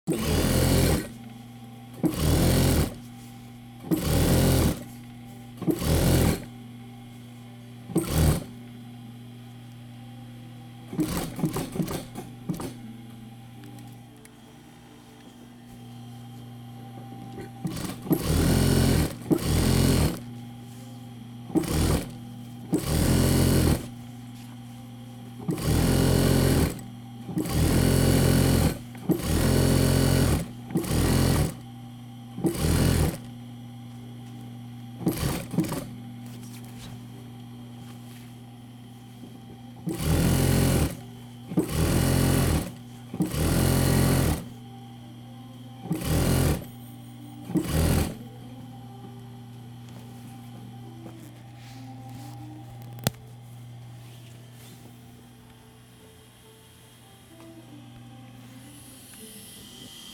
Owner operating the old sewing machine. 老闆使用老式裁縫機
West Central District, Tainan City, Taiwan, 23 March 2014, ~16:00